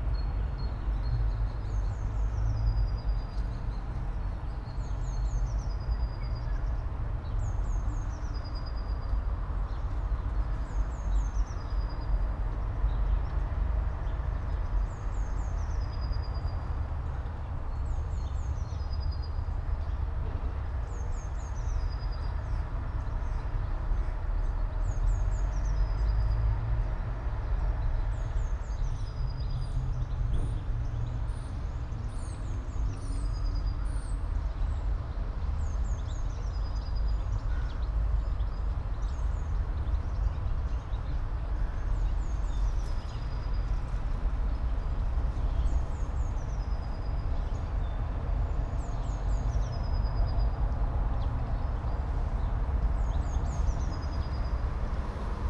all the mornings of the ... - apr 22 2013 mon